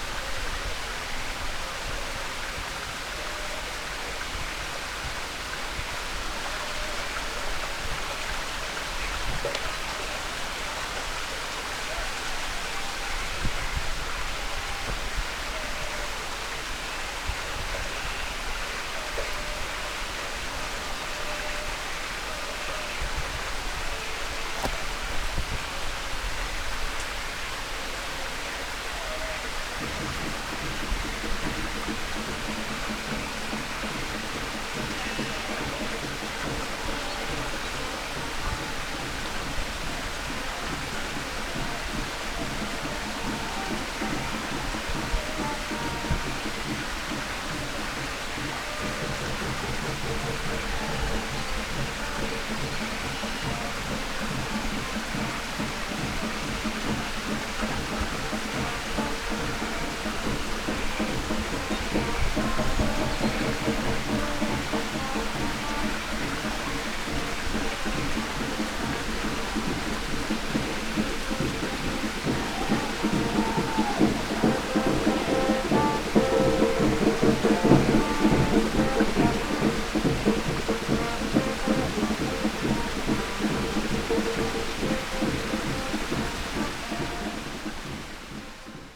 Madeira, north from Ribeira Brava - soccer match
while up high in the mountains i picked up sounds of cheering soccer fans coming for a very far away, reverberated off the cliffs.
Portugal